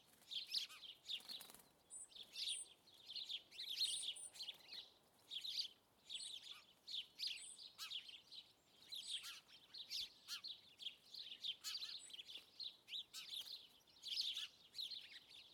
{"title": "Lisburn, Reino Unido - Derriaghy Dawn-03", "date": "2014-06-22 05:31:00", "description": "Field Recordings taken during the sunrising of June the 22nd on a rural area around Derriaghy, Northern Ireland\nZoom H2n on XY", "latitude": "54.55", "longitude": "-6.04", "altitude": "80", "timezone": "Europe/London"}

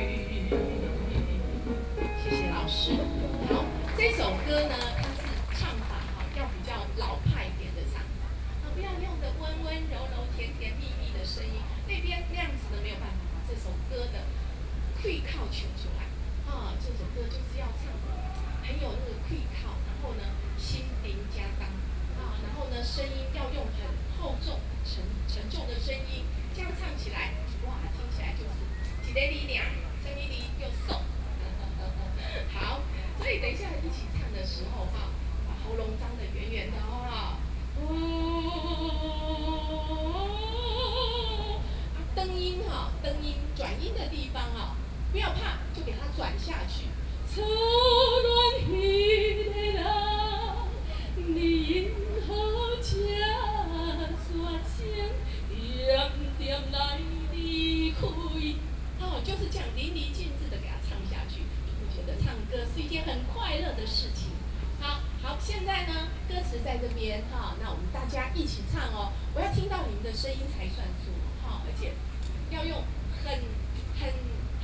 {"title": "Beitou Park - Teach singing", "date": "2013-08-24 20:15:00", "description": "Teach singing Taiwanese songs, Sony PCM D50 + Soundman OKM II", "latitude": "25.14", "longitude": "121.51", "altitude": "22", "timezone": "Asia/Taipei"}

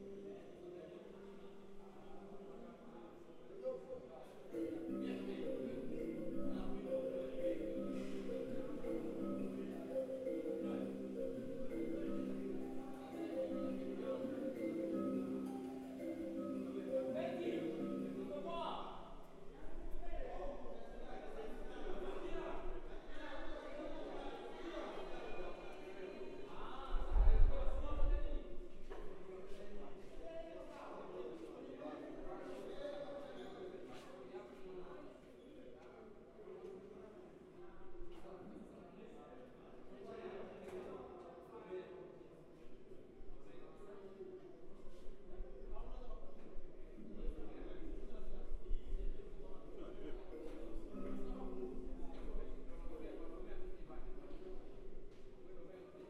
{"title": "National Museum and House of Culture, Kivukoni, Dar es Salaam, Tanzania - Kalimba practice in the garden of the national museum", "date": "2016-10-19 18:00:00", "description": "Two days before their big concert at the national museum and house of culture in Dar es Salaam, the Lumumba Dance and Theater group was practicing in the main auditorium. This recording was taken from outside, in the garden, hanging out near the massive Ficus tree that stands there. Because of the tropical climate, the walls to the auditorium are not air-tight and are made of a lacing of bricks which let out the nice sounds of this Kalimba player practicing his piece.", "latitude": "-6.81", "longitude": "39.29", "altitude": "18", "timezone": "Africa/Dar_es_Salaam"}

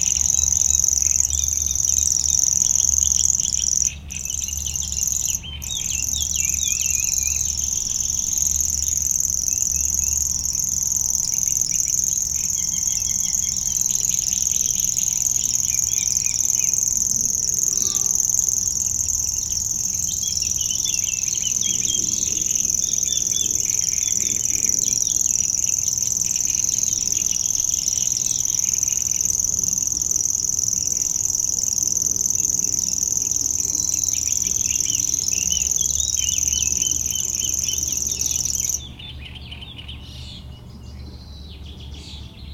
{"title": "plage de Chatillon, Chindrieux, France - Locustelle tachetée .", "date": "2004-05-15 10:00:00", "description": "Dans la roselière de la plage de Châtillon au Nord du lac du Bourget, une rare locustelle tachetée, rossignol, rousserole turdoïde, fauvette...", "latitude": "45.80", "longitude": "5.85", "altitude": "235", "timezone": "Europe/Paris"}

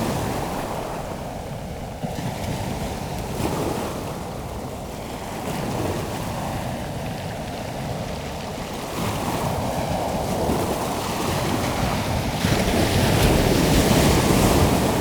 {"title": "The Fairway, Amble, Morpeth, UK - High tide ... rising sun ...", "date": "2017-09-22 05:45:00", "description": "High tide ... rising sun ... Amble ... open lavalier mics on T bar clipped to mini tripod ... sat in the shingle ... watching the sun come up ... and a high tide roost of sanderlings ...", "latitude": "55.33", "longitude": "-1.56", "altitude": "2", "timezone": "Europe/London"}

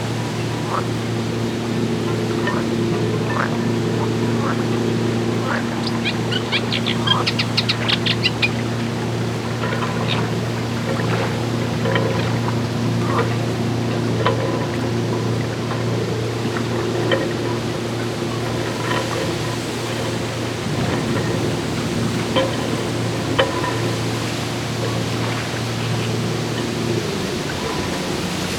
{"title": "Ptasi Raj, Gdańsk, Poland - Grobla żaby 2", "date": "2015-06-07 10:49:00", "description": "Grobla żaby 2, rec. Rafał Kołacki", "latitude": "54.36", "longitude": "18.79", "timezone": "Europe/Warsaw"}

